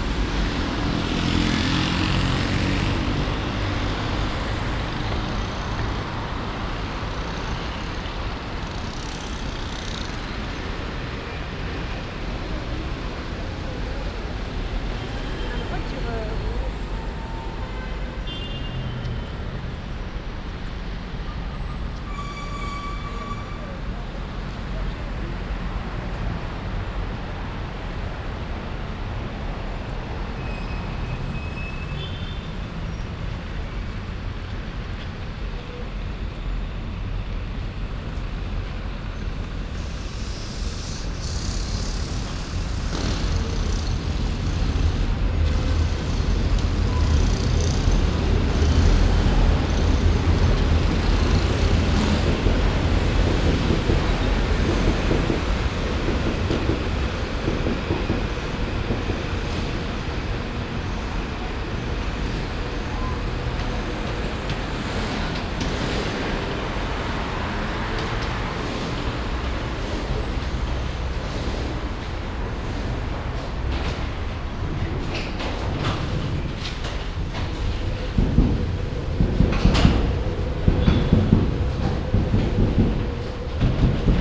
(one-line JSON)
{"title": "Paris soundwalks in the time of COVID-19 - Friday morning metro and soundwalk in Paris in the time of COVID19: Soundwalk", "date": "2020-10-16 08:46:00", "description": "\"Friday morning metro and soundwalk in Paris in the time of COVID19\": Soundwalk\nFriday, October 16th 2020: Paris is scarlett zone for COVID-19 pandemic.\nOne way trip walking from Airbnb flat to the metro 7 from Stalingrad to Jussieu and short walking to Sorbonne Campus for Rencontres nationales recherches en musique\nStart at 8:46 p.m. end at 10:33 p.m. duration 46’37”\nAs binaural recording is suggested headphones listening.\nPath is associated with synchronized GPS track recorded in the (kmz, kml, gpx) files downloadable here:\nFor same set of recording go to:\nLoc=51267", "latitude": "48.88", "longitude": "2.37", "altitude": "61", "timezone": "Europe/Paris"}